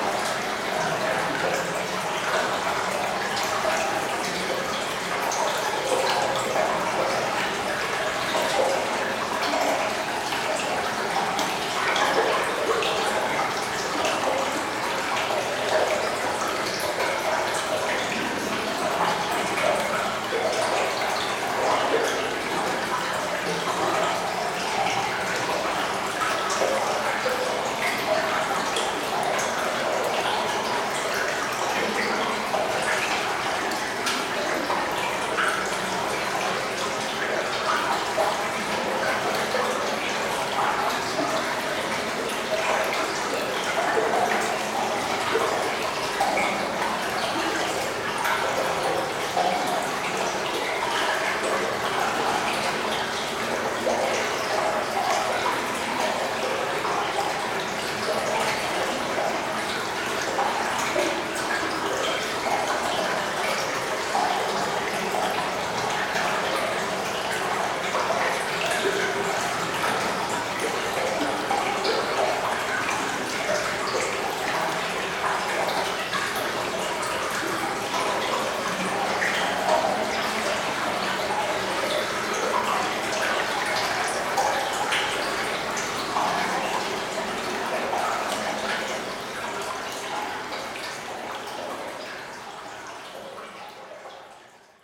Water quietly flowing in a lost and abandoned tunnel in the Cockerill mine. Abiance of this place is very solitary.